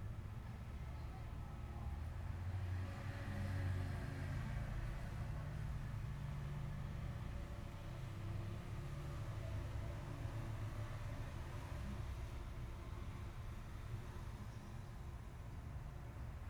{"title": "Xihu Township, Changhua County - The sound of the wind", "date": "2014-03-08 20:20:00", "description": "The sound of the wind, In the hotel\nZoom H6 MS", "latitude": "23.96", "longitude": "120.47", "altitude": "22", "timezone": "Asia/Taipei"}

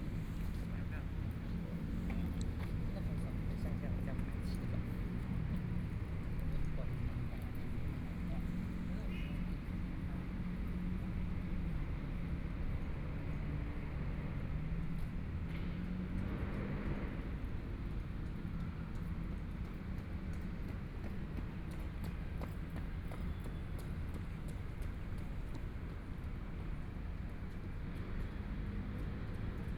{"title": "碧湖公園, Neihu District - The park at night", "date": "2014-03-22 21:49:00", "description": "The park at night", "latitude": "25.08", "longitude": "121.58", "timezone": "Asia/Taipei"}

